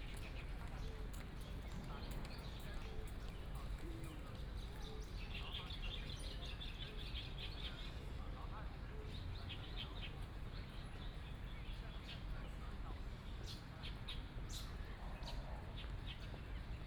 Birds singing, Voice conversations between students, Traffic Sound, Binaural recording, Zoom H6+ Soundman OKM II
Bade City, 元智三館, 9 December 2013